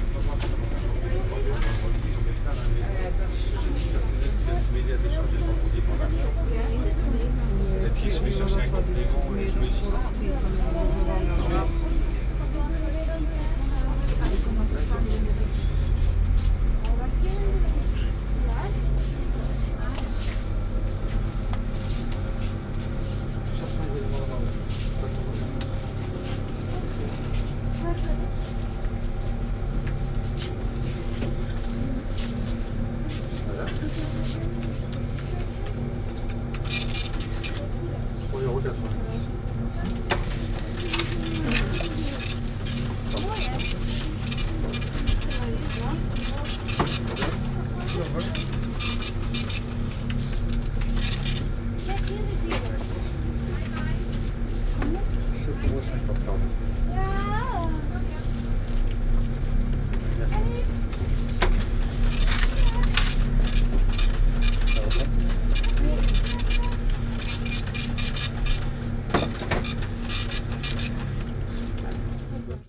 audresseles, kleiner supermarkt
mittags im kleinen supermarkt des ortes, diverse stimmen, das brummen der kühlanlage, das klingeln der kasse
fieldrecordings international:
social ambiences, topographic fieldrecordings
marktplatz, supermarkt